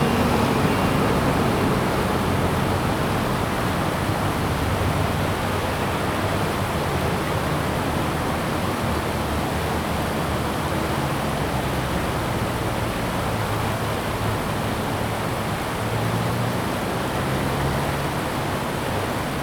Wuquan Rd., Taichung City, Taiwan - Stream sound
Stream sound, Traffic Sound
Zoom H2n MS+XY